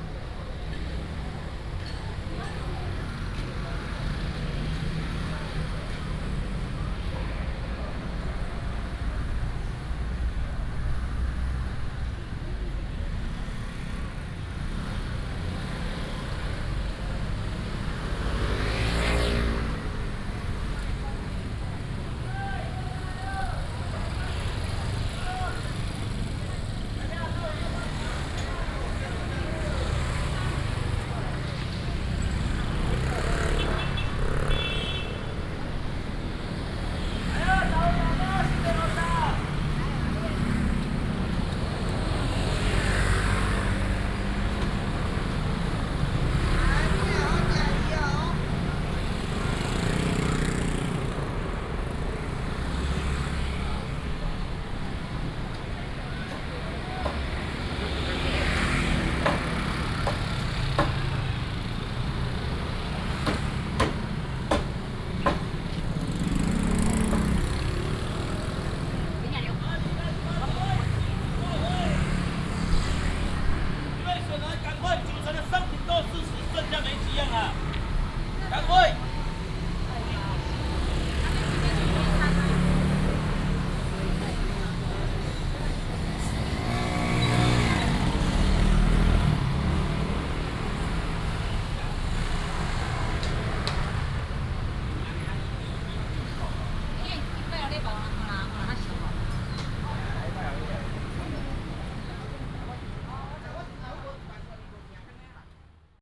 {"title": "和平街, Yingge Dist., New Taipei City - Walking in a small alley", "date": "2017-08-25 07:37:00", "description": "Walking in a small alley, Traditional market, vendors peddling, traffic sound", "latitude": "24.95", "longitude": "121.35", "altitude": "56", "timezone": "Asia/Taipei"}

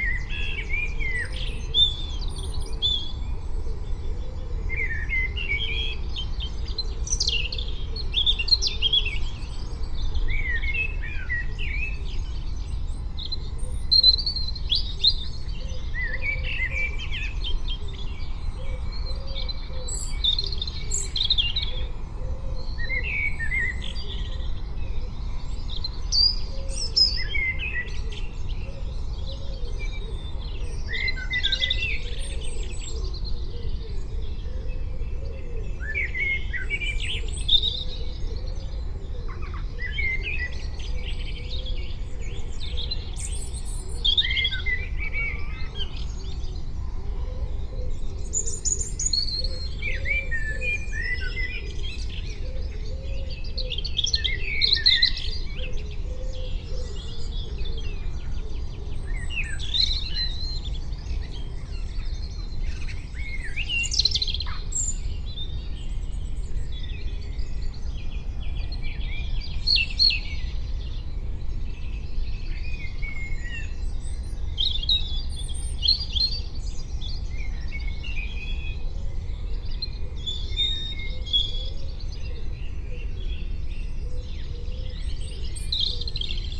{"title": "Pergola, Malvern, UK - Real Time Morning 5am 21-4-22", "date": "2022-04-21 05:05:00", "description": "A real time recording by the wood shed at the end of the garden. This is 5am and the end of a 10 hour overnight capture. Ducks fly onto the pond, owls and birds call and thankfully there is hardly any traffic on Hanley Road. The cars you can hear are 2 -5 miles away their sounds reflecting from the Severn Valley floor up the lower slopes of the Malvern Hills. A mouse runs across in front of the recorder. A rat trap snaps. There is one sound early in this piece I cannot identify. I place the omni microphones in a 180 degree configuration on top of the rucksack which holds the recorder the whole kit then sits on a large chair an arms length from the pond facing south.", "latitude": "52.08", "longitude": "-2.33", "altitude": "120", "timezone": "Europe/London"}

